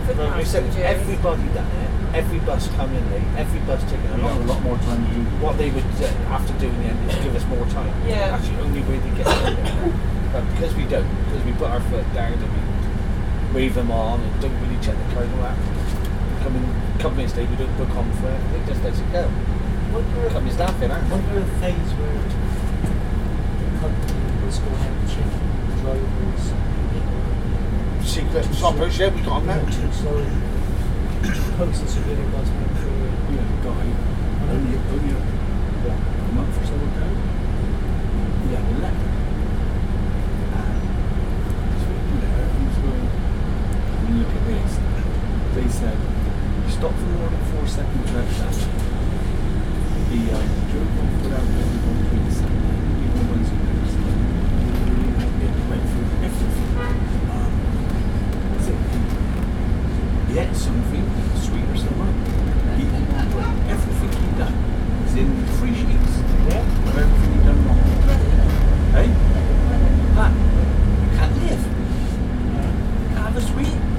Ventnor, Isle of Wight, UK - conversation on bus about buses
Upper deck of no 3 bus West country holidaymakers discussing buses and the weather, ambient bus noise, H2n recorder
8 November 2013, ~11:00